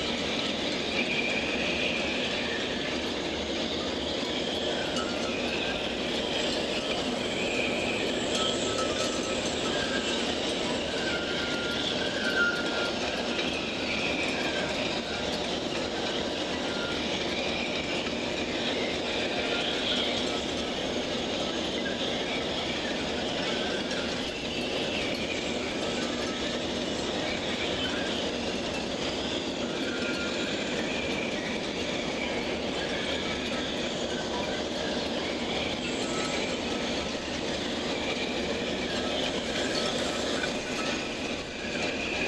Granville, France - port du hérel/tempête
a lot of wind
boats and wires as heolian harp